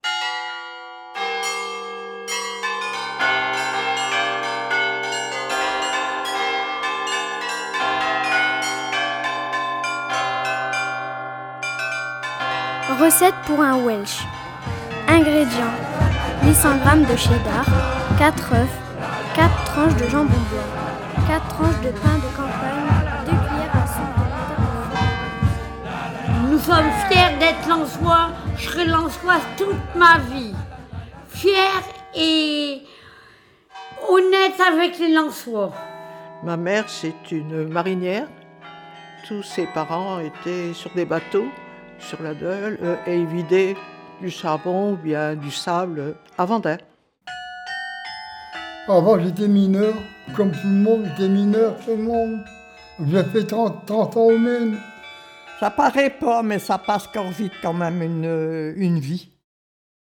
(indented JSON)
{
  "title": "Rue Duguesclin, Lens, France - \"On s'dit Quoi ?\" Installation sonore dans les jardins du Louvre Lens - été 2020",
  "date": "2020-07-15 14:32:00",
  "description": "\"On s'dit Quoi ?\"\nInstallation sonore dans les jardins du Louvre Lens - été 2020\nCommande du Louvre-Lens\nTeaser de l'installation.",
  "latitude": "50.43",
  "longitude": "2.80",
  "altitude": "45",
  "timezone": "Europe/Paris"
}